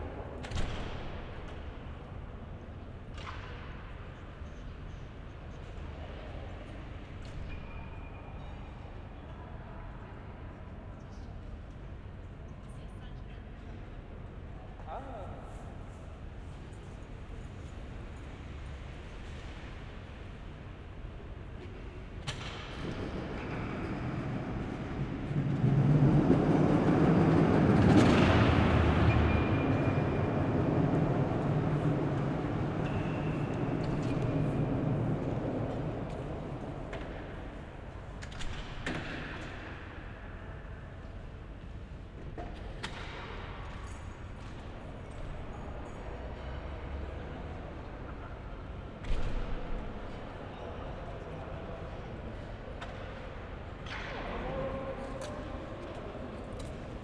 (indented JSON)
{
  "title": "berlin, friedrichstr., kontorenhaus - entry hall",
  "date": "2010-12-23 12:30:00",
  "description": "echoes and ambient in the huge entrance hall of Kontorenhaus. the building hosts agencies, small businesses, a restaurant and a hotel.",
  "latitude": "52.51",
  "longitude": "13.39",
  "altitude": "45",
  "timezone": "Europe/Berlin"
}